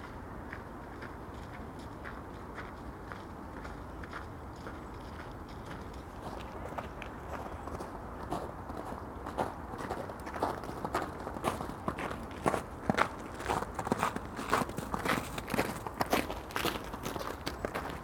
The Drive Moor Crescent Moor Road South Rectory Road
The stillness of winter allotments
cold
wet
shades of brown
Passer-by chatter
9 January, ~14:00